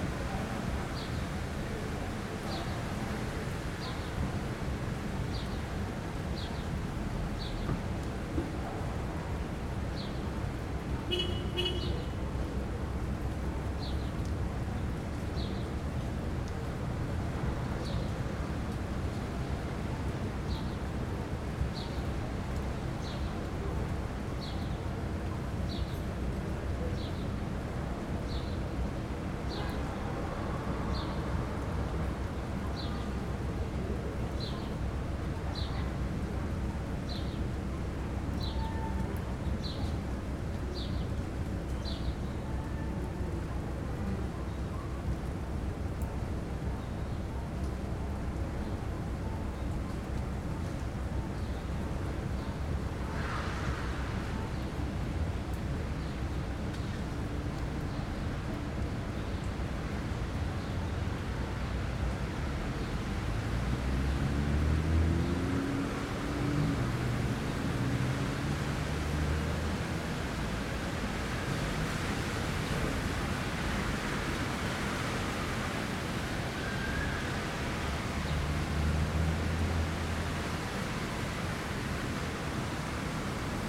{
  "title": "W 135th St, New York, NY, USA - Harlem Thunderstorm",
  "date": "2022-05-28 13:00:00",
  "description": "New York City Police Department (NYPD) sirens and car horns honk as a thunderstorm passes through Central Harlem, NYC. Raining and ~70 degrees F. Tascam Portacapture X8, A-B internal mics facing north out 2nd floor apartment window, Gutmann windscreen, Manfrotto Nanopole. Normalized to -23 LUFS using DaVinci Resolve Fairlight.",
  "latitude": "40.82",
  "longitude": "-73.95",
  "altitude": "14",
  "timezone": "America/New_York"
}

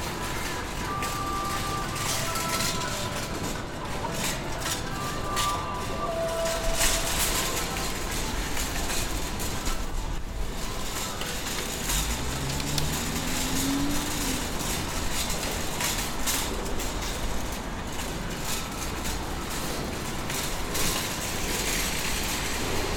{
  "title": "Ave, New York, NY, USA - Supermarket Shopping Carts",
  "date": "2019-11-10 13:00:00",
  "description": "Sounds of various supermarket shopping carts under Riverside Drive Viaduct.\nZoom H6",
  "latitude": "40.82",
  "longitude": "-73.96",
  "timezone": "America/New_York"
}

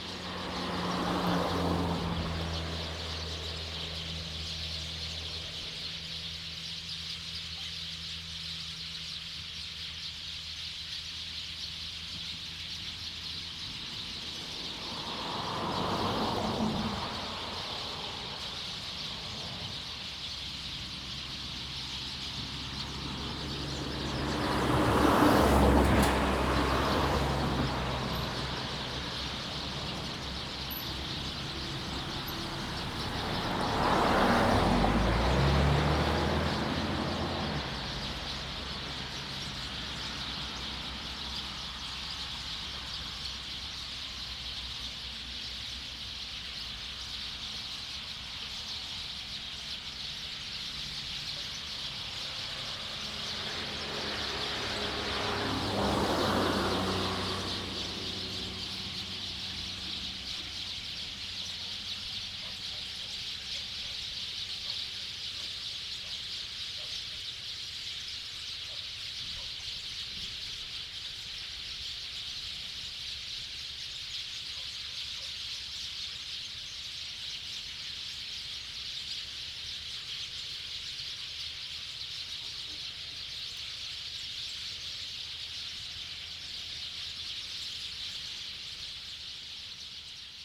{
  "title": "Yuli Township, Hualien County - Birdsong",
  "date": "2014-10-08 17:05:00",
  "description": "Birdsong, Traffic Sound, Next to farmland\nZoom H2n MS+ XY",
  "latitude": "23.41",
  "longitude": "121.37",
  "altitude": "115",
  "timezone": "Asia/Taipei"
}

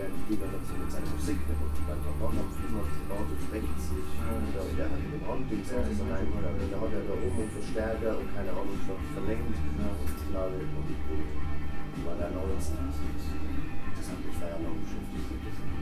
{
  "title": "Helgoland, Deutschland - felsenkrug",
  "date": "2014-02-08 23:10:00",
  "description": "felsenkrug, bremer str. 235, 27498 helgoland",
  "latitude": "54.18",
  "longitude": "7.89",
  "altitude": "14",
  "timezone": "Europe/Berlin"
}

